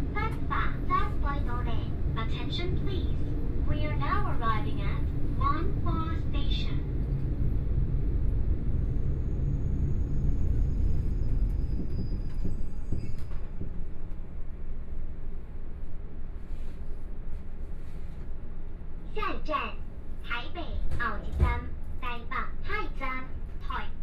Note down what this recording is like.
from Banqiao Station to Wanhua Station, Sony PCM D50 + Soundman OKM II